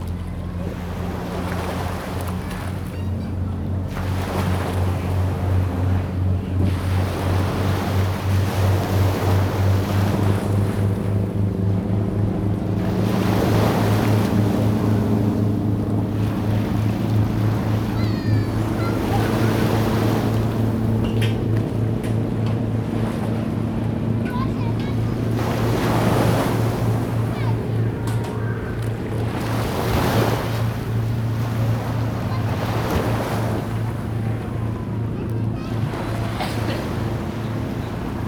Sound tide, Yacht travel by river
Zoom H2n MS+XY
Tamsui District, New Taipei City, Taiwan